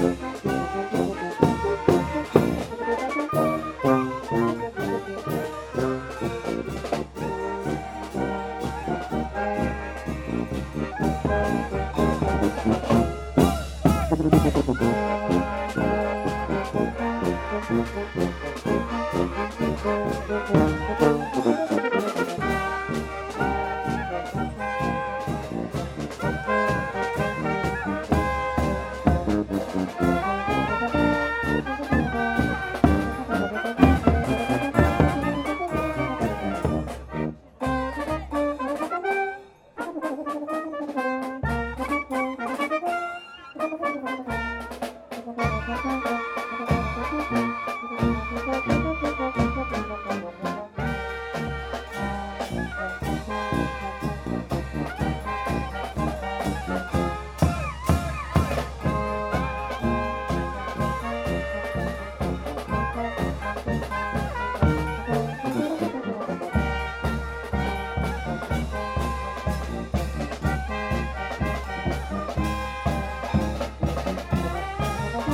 Court-St.-Étienne, Belgique - German fanfare
During the annual feast of Court-St-Etienne, a belgian fanfare in playing in the street. They wear traditionnal costumes from Germany and they play Oberbayern music. The name is Die Lustigen Musikanten aus Dongelberg.